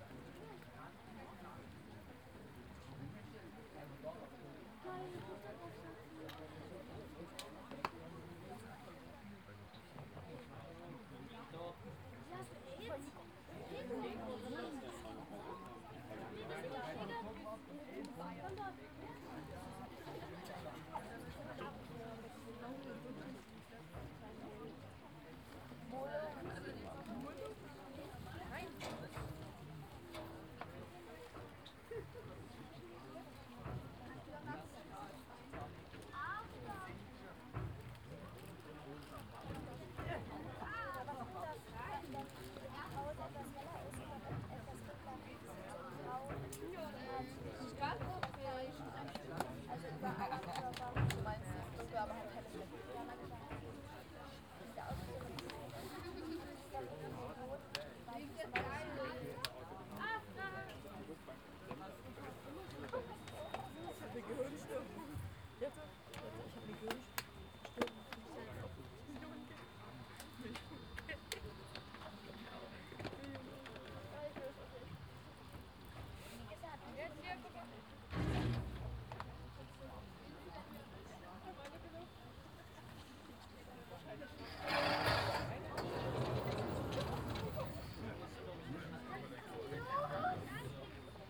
Kurort Rathen, Fährstelle Niederrathen - Reaction Ferry / Gierseilfähre, crossing river Elbe
This ferry ideally operates without a motor, so it's pretty silent and has a good ecological footprint.
(Sony PCM D50, OKM2)